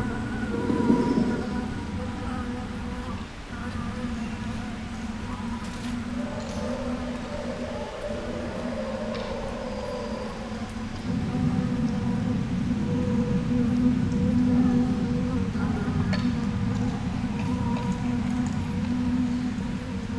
A canter round the adventure golf course ... Alnwick Gardens ... lavalier mics clipped to baseball cap ... background noise ... voices ...